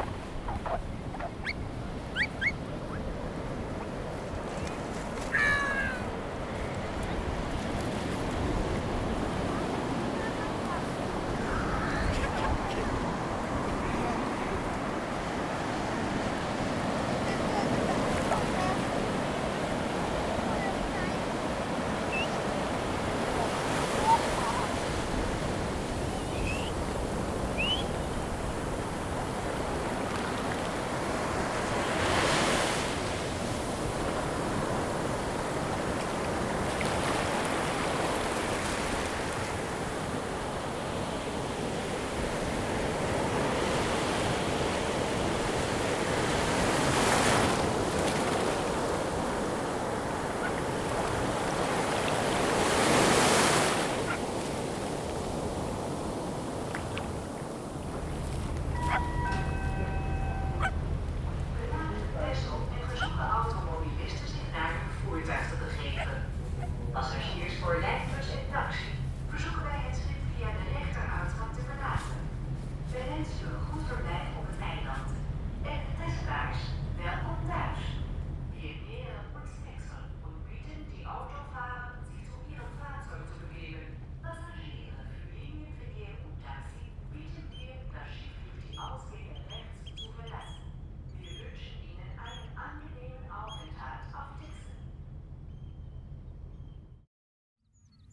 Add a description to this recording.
Soundscape of my visit to the island Texel made for World Listening Day, July 18th 2020, As many people I spend this summer holiday within the borders of my own country, rediscovering the Dutch landscape.